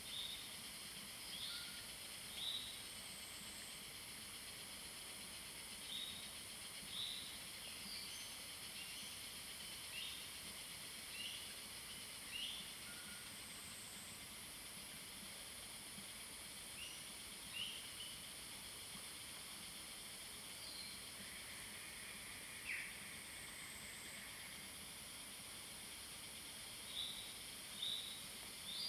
華龍巷, 魚池鄉五城村 - Cicadas cry and Bird sounds
In the woods, Cicadas cry, Bird sounds
Zoom H2n MS+XY
5 May 2016, 15:05